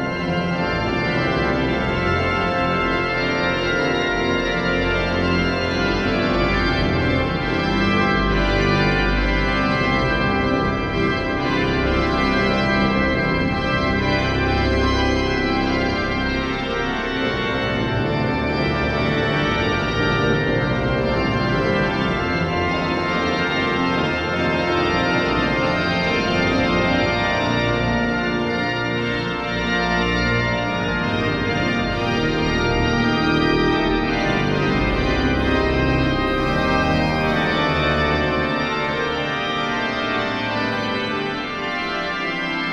Organ concert Marienkirche - 5/7 Organ concert Marienkirche
05 Samuel de Lange - unkown title
Berlin, Germany, September 2011